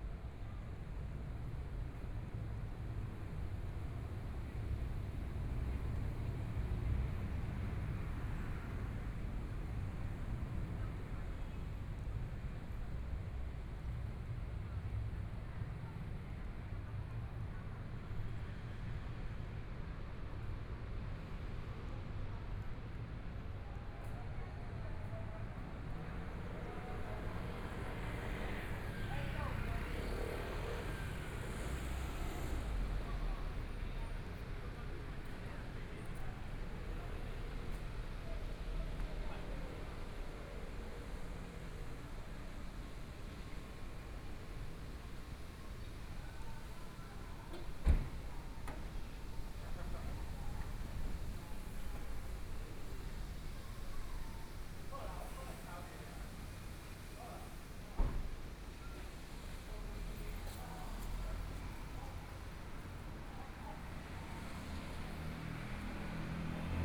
Tianxiang Rd., Zhongshan Dist. - walking on the Road
walking in the Tianxiang Rd., from Minquan W. Rd., From the intersection into the small roadway Traffic Sound, Binaural recordings, Zoom H4n+ Soundman OKM II